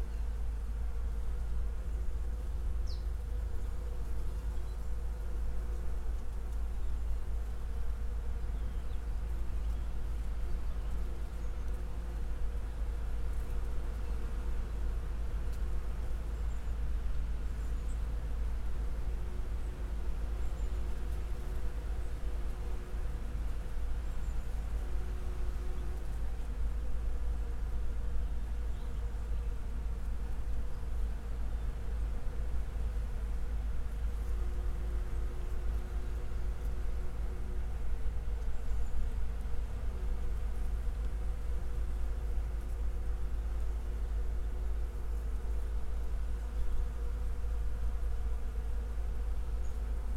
Field off Barric Lane, Eye, Suffolk, UK - crab apple tree in blossom with bees
a crab apple tree an isolated remnant of what once must have been a rich, diverse hedgerow, pruned hard into an odd L-shape against the chain link fence of the Research Station. This warm sunny day in April it is densely covered in thick pale pink blossom and swarming with bees of every shape and size; a stark and curious contrast with the silent monocrop that it sits adjacent to. Wren and Chiffchaff. Rusty, abandoned sugarbeet harvester shaken by the wind. The all pervasive background hum of the Research Station.